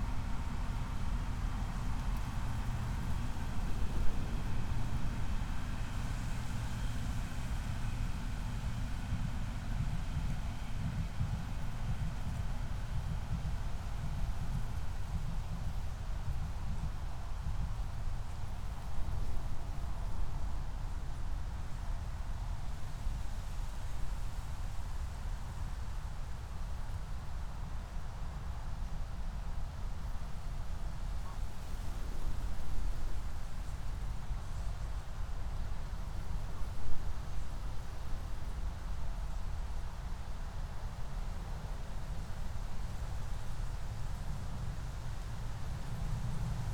Moorlinse, Berlin Buch - near the pond, ambience
08:19 Moorlinse, Berlin Buch